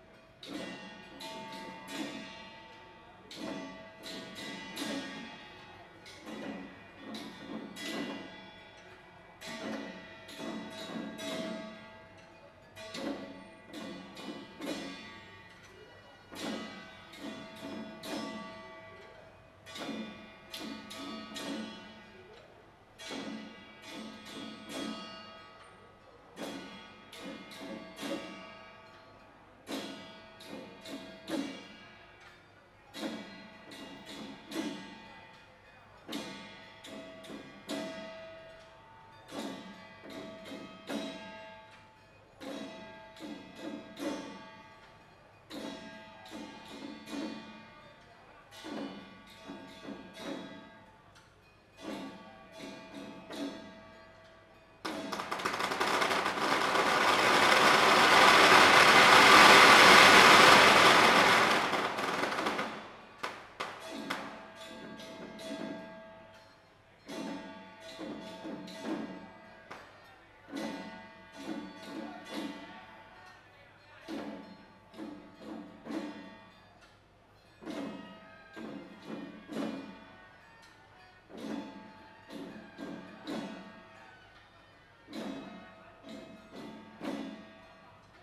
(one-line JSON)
{"title": "大仁街, Tamsui District - Traditional festival", "date": "2016-06-10 17:35:00", "description": "Traditional festival parade\nZoom H2n Spatial audio", "latitude": "25.18", "longitude": "121.44", "altitude": "45", "timezone": "Asia/Taipei"}